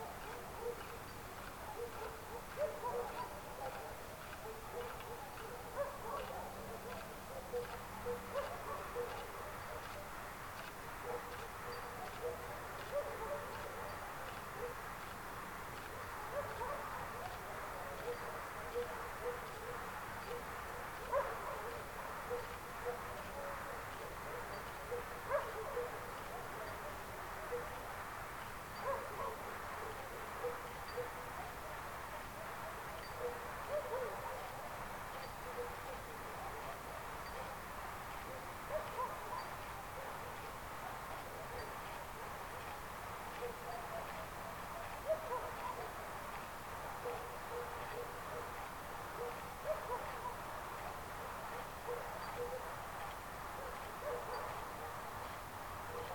Unnamed Road, Antigonos, Ελλάδα - Corn Fields
Record by: Alexandros Hadjitimotheou
Αποκεντρωμένη Διοίκηση Ηπείρου - Δυτικής Μακεδονίας, Ελλάς, 8 August, ~03:00